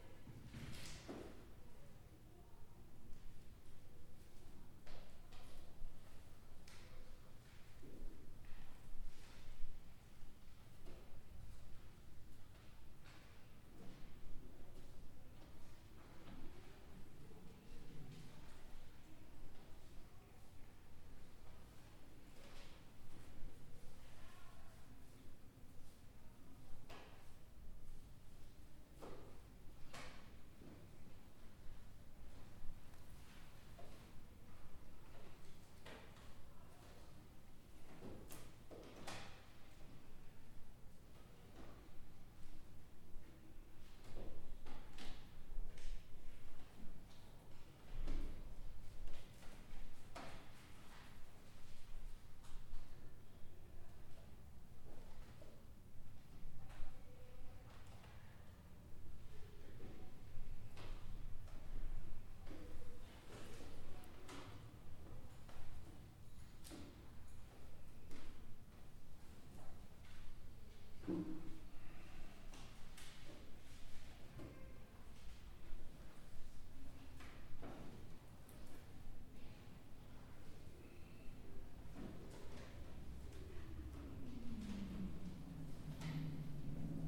Stadsbibliotheek, Mechelen, België - Stadsbibliotheek Mechelen
[Zoom H4n Pro] Sounds from the balcony in the main hall of the Mechelen public library